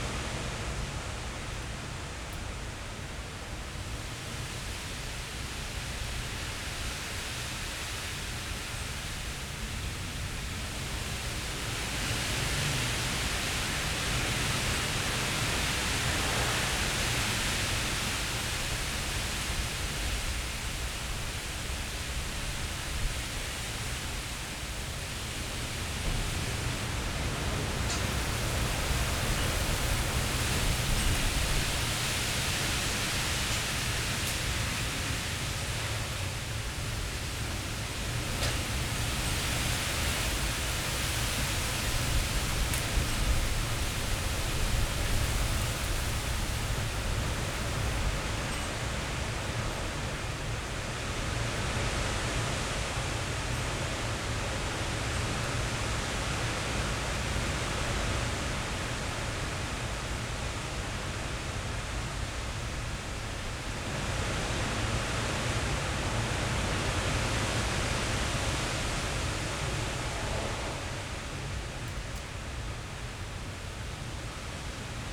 a storm arrives (Sony PCM D50, DPA4060)
Berlin, Germany, 5 October 2017